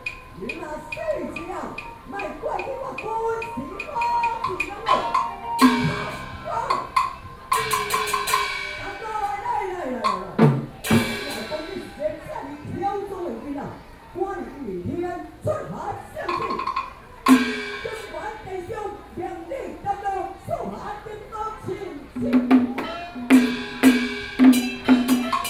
Buddhist Temple, Luzhou District, New Taipei City - Taiwanese Opera
Outdoor Taiwanese Opera, Standing close to the drums, Binaural recordings, Sony PCM D50 + Soundman OKM II